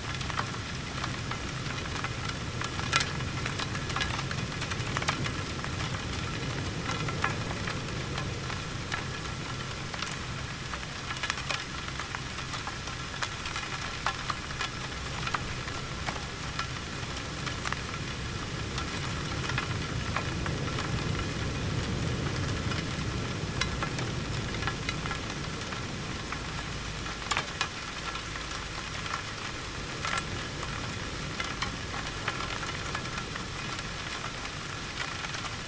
Dredging ship HR Morris was stationed outside of Oxnard and the outlet pipe was run across the beach. This is the sound of sand and rocks running through the tube.